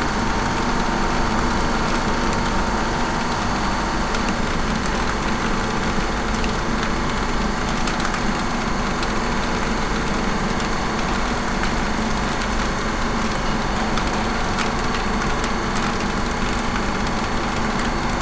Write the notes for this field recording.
Large air vent of an industrial building humming amidst rain droplets dripping from the roof. Recorded with ZOOM H5.